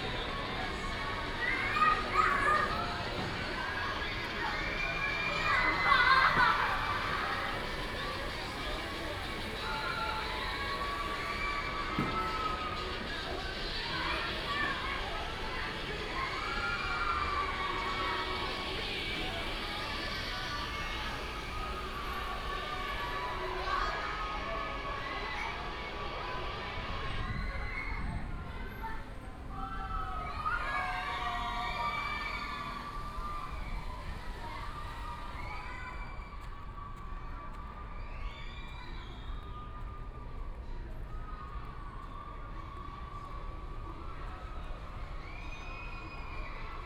National Theater, Taiwan - Hurray
A group of high school students are practicing performances, Children and high school students each cheers, Sony PCM D50 + Soundman OKM II